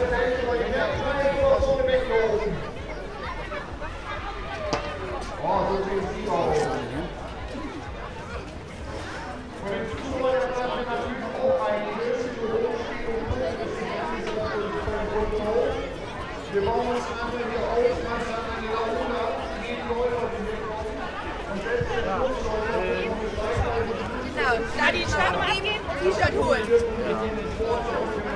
Hauptstr., Oldenburg - Brunnenlauf, preparation for public marathon
preparation for the Brunnenlauf, a public annual marathon, kids run will start soon.
(Sony PCM D50, Primo EM172)